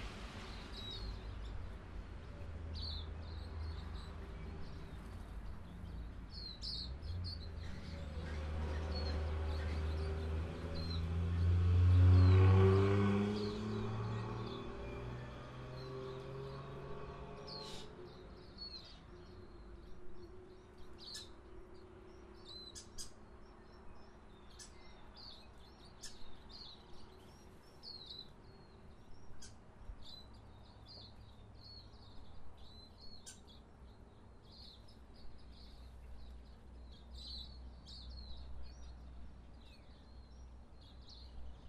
Adderley Terrace, Ravensbourne, Dunedin
Bellbirds at 22